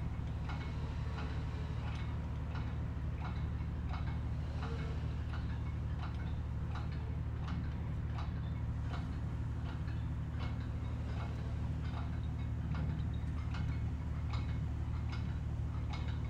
Recorded on Falmouth University Field Trip with students from Stage 2 'Phonographies' module:
Soundfield SPS200 recorded to Tascam DR-680, stereo decode